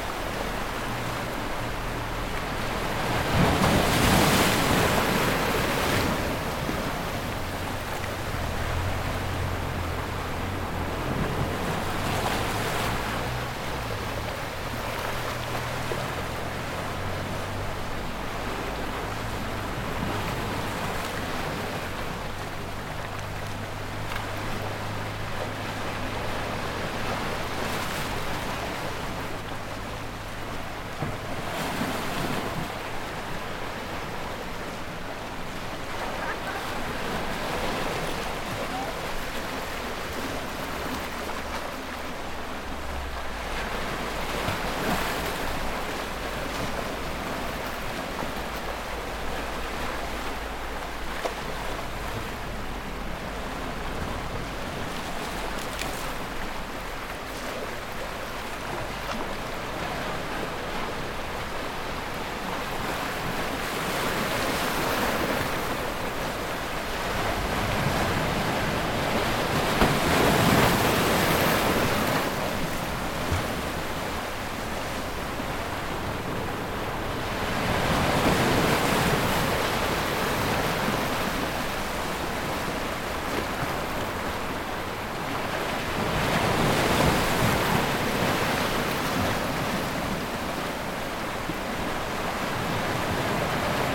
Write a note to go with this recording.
Wave Sound, Captation : ZOOM H6